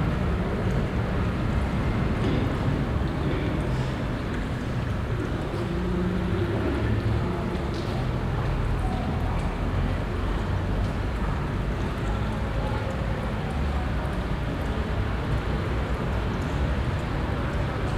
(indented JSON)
{
  "title": "Altstadt, Düsseldorf, Deutschland - Düsseldorf, K20, Entry Hall",
  "date": "2012-11-05 16:55:00",
  "description": "At the entry hall of the contemporary art museum K20. The sound of voices and steps reverbing in the open hallway with a small water pool.\nThis recording is part of the exhibition project - sonic states\nsoundmap nrw - topographic field recordings, social ambiences and art places",
  "latitude": "51.23",
  "longitude": "6.78",
  "altitude": "43",
  "timezone": "Europe/Berlin"
}